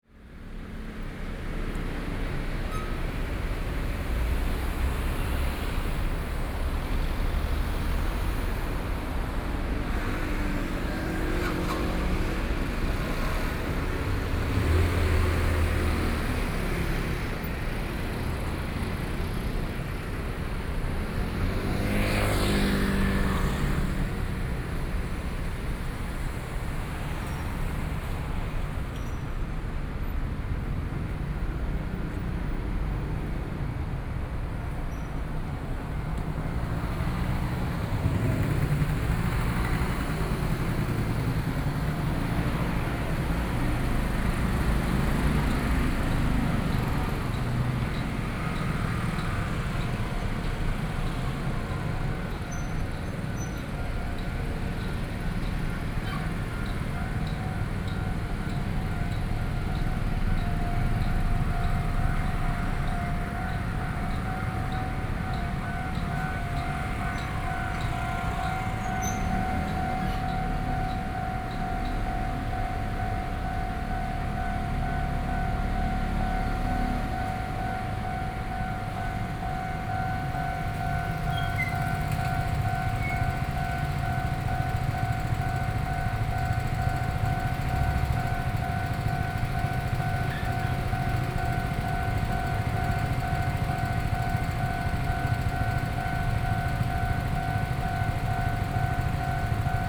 in the Railroad crossing, Traffic Sound, Hot weather, Traveling by train
Sony PCM D50+ Soundman OKM II